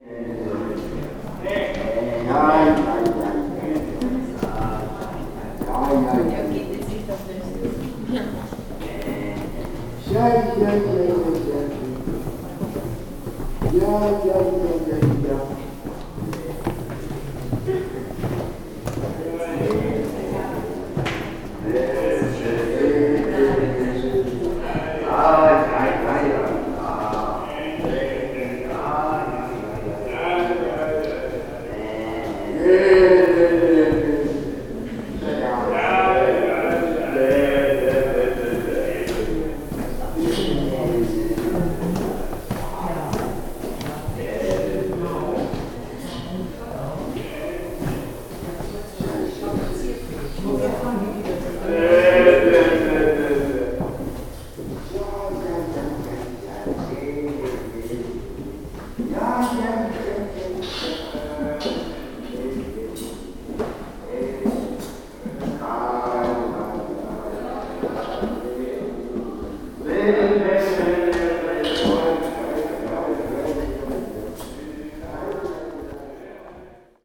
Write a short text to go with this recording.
soundinstallation inside the museum staitcase during the beuys exhibition - here beuys - ja ja ne ne recording plus steps of visitors, soundmap d - social ambiences, topographic field recordings, art spaces